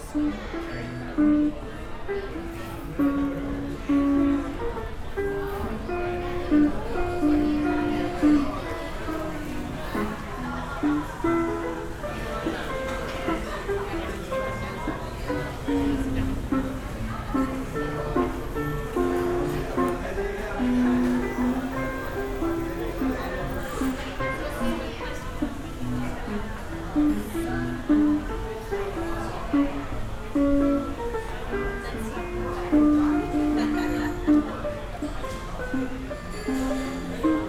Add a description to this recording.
*Best with headphones* Eating, drinking, listening and people-watching at Cafe du Monde in NOLA. Street performer, traffic, dishes, talking, laughing, CA-14(quasi binaural) > Tascam DR100 MK2